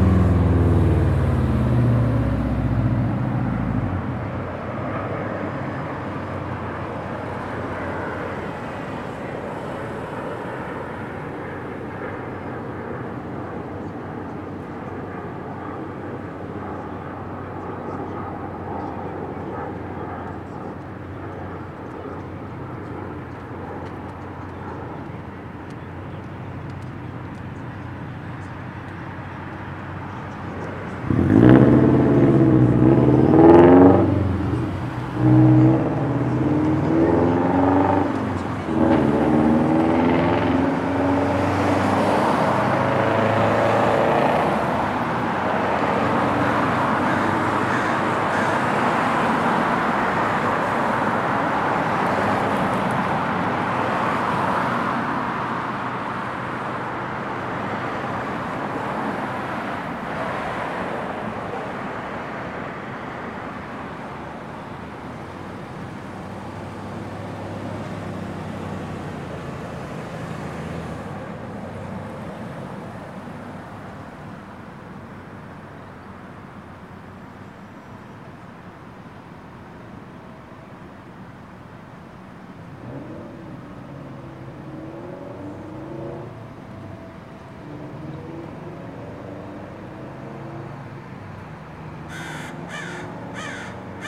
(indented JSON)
{
  "title": "El Colegio Rd, Goleta, CA, USA - Traffic, Birds, Morning Sounds",
  "date": "2019-10-24 08:33:00",
  "description": "Just outside the Westwinds apartment is a big cross street as well as a bus stop. There's a lot of traffic and bus noises, as well as some birds cawing and chirping. A plane also flies by at some point.",
  "latitude": "34.42",
  "longitude": "-119.86",
  "altitude": "9",
  "timezone": "America/Los_Angeles"
}